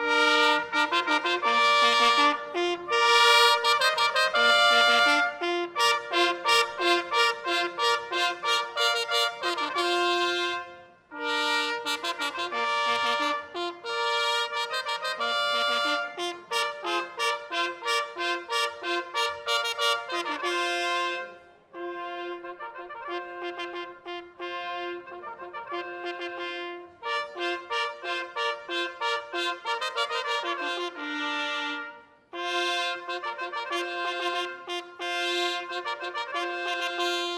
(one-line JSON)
{"title": "vianden, castle, flourish", "date": "2011-08-08 17:49:00", "description": "Three trumpet horns playing a medieval flourish. Exampel one of two. Recorded at the annual medieval castle festival inside the gallery hall of the castle.\nVianden, Schloss, Fanfare\nDrei Horntrompeten spielen eine mittelalterliche Fanfare. Beispiel 1 von 2. Aufgenommen beim jährlichen Mittelalterfest im Schloss in der Halle des Schlosses.\nVianden, château, fioriture\nTrois trompettes jouant une fioriture médiévale. Exemple 1 sur 2. Enregistré lors du festival médiéval annuel au château, à l’intérieur du hall du château.\nProject - Klangraum Our - topographic field recordings, sound objects and social ambiences", "latitude": "49.94", "longitude": "6.20", "altitude": "291", "timezone": "Europe/Luxembourg"}